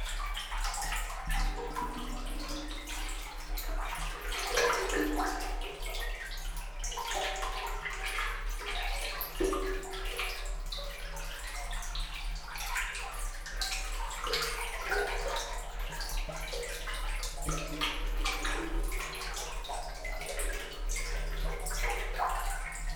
Lietzengraben, Berlin Buch, Deutschland - musical water flow in manhole
canal or underpass of Lietzengraben, a manhole in the middle, for regulating water levels. Water flows quickly and makes a musical sound within the concrete structure.
(Tascam DR-100 MKIII, DPA4060)